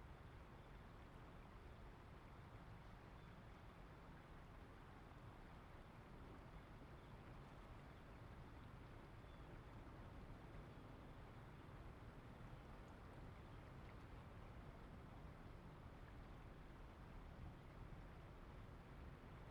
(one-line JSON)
{"title": "平安路, Dayuan Dist., Taoyuan City - Under the airway", "date": "2017-08-18 15:32:00", "description": "Under the airway, The plane landed, The plane was flying through, Zoom H2n MS+XY", "latitude": "25.07", "longitude": "121.21", "altitude": "24", "timezone": "Asia/Taipei"}